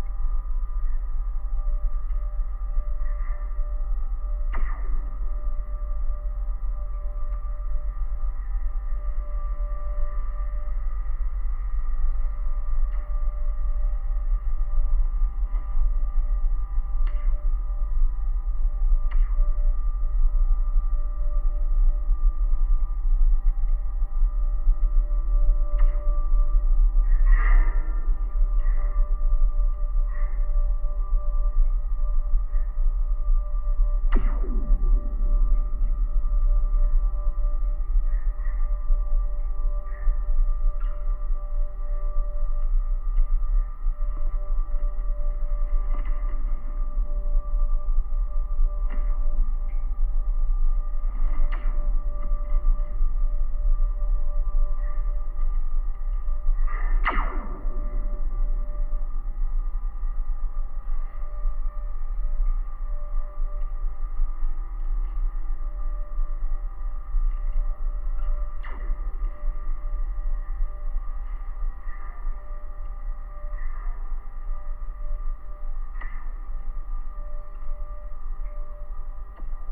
Taujenai, Lithuania, cell tower
cell tower support wires. recorded with two contact mics and geophone. low frequencies.